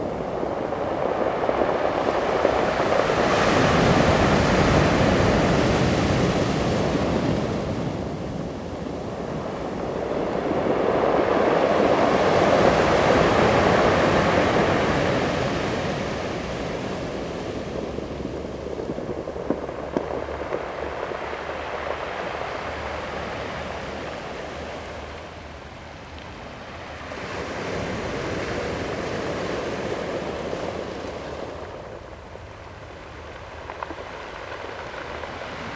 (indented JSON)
{
  "title": "花蓮市民有里, Hualien County - Waves",
  "date": "2016-12-14 16:02:00",
  "description": "Waves sound\nZoom H2n MS+XY +Spatial Audio",
  "latitude": "23.98",
  "longitude": "121.62",
  "timezone": "GMT+1"
}